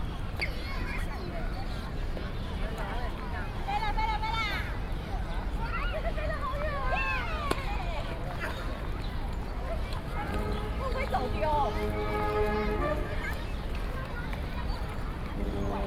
Ketagalan Boulevard, Taipei - Walking in the square